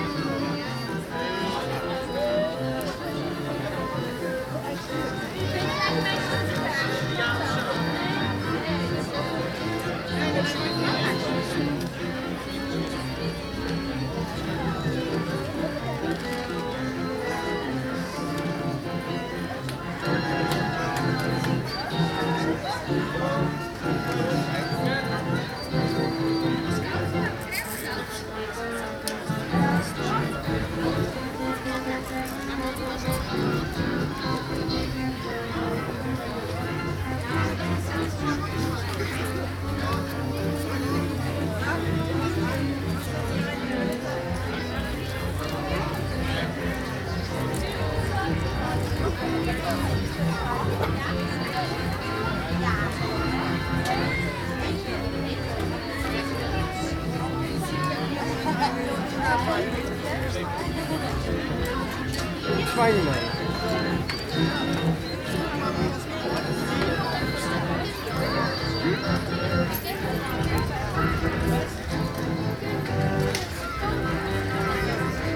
Binaural recording made on the bi-annual 'Home Made Marker' in the Zeehelden Quater of The Hague.